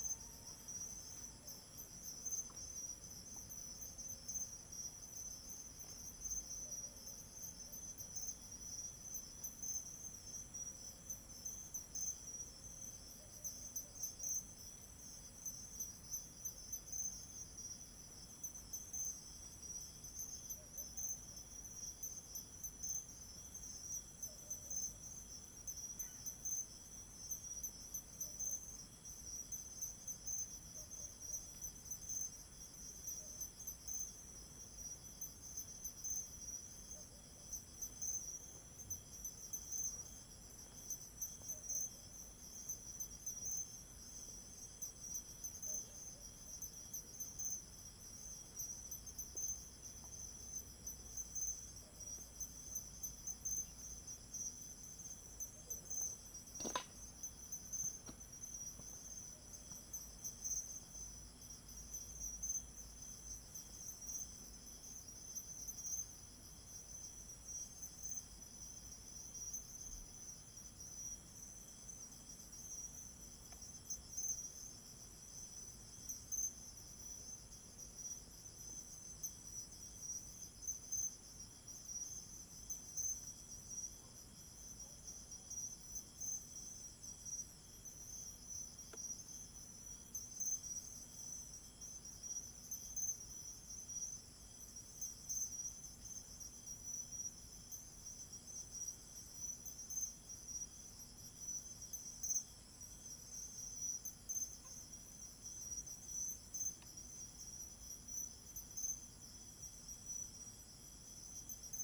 Ln., Haipu Rd., Xiangshan Dist., Hsinchu City - Insects
Insects sound, Dog sounds, Zoom H2n MS+XY
Xiangshan District, Hsinchu City, Taiwan, 21 September